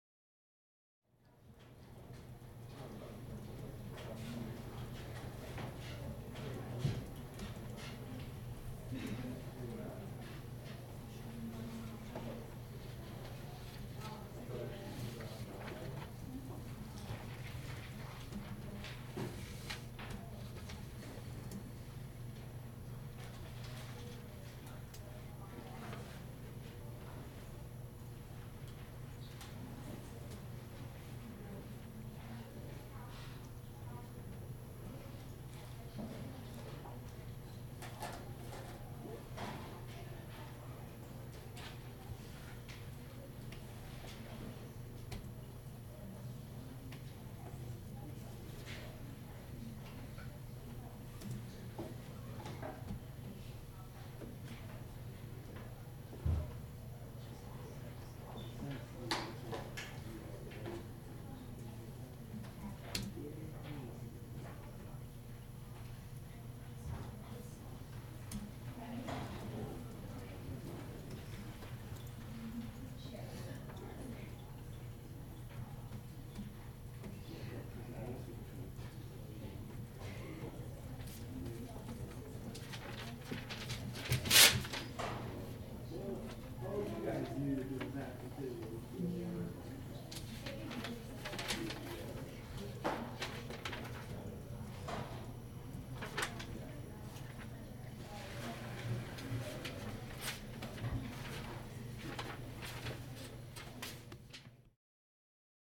Muhlenberg College Hillel, West Chew Street, Allentown, PA, USA - A Level of Trexler Library
This recording was taken in the A Level of Muhlenberg College's Trexler Library.